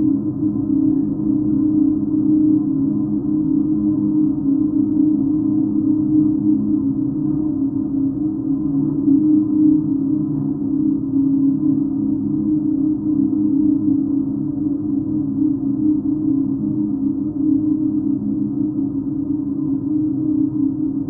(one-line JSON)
{"title": "Jūrmala, Latvia, chimneys drone", "date": "2020-07-22 18:25:00", "description": "geophone on the root of metallic chimney", "latitude": "56.97", "longitude": "23.81", "altitude": "6", "timezone": "Europe/Riga"}